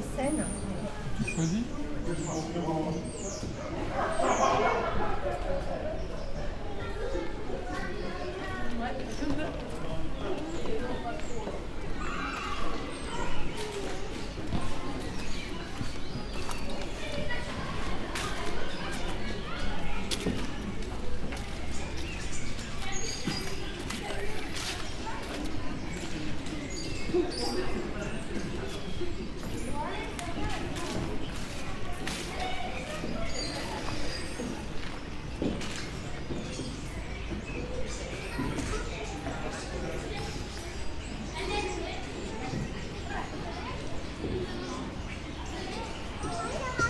Carre Curial, Chambéry, France - Carré Curial
En sortant de la médiathèque, la cour du Carré Curial, étourneaux dans les platanes, aire de jeu, passants.